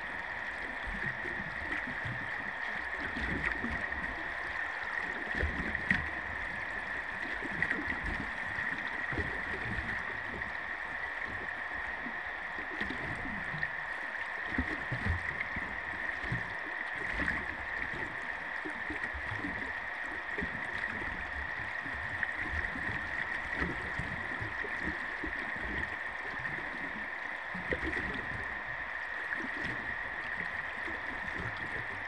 Ústí nad Labem, Česká republika - Pod vodou Milady
Hydrofon ze břehu zatopeného hnědouhelného lomu Chabařovice, dnes jezero Milada
Ústí nad Labem-město, Czech Republic, 10 August 2016